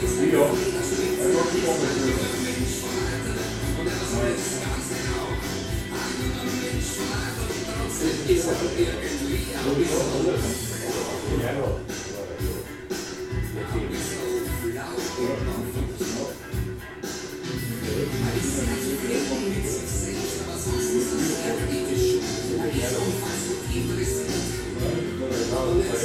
jahnstub'n, jahnstr. 37, 6020 innsbruck
27 December 2012, ~18:00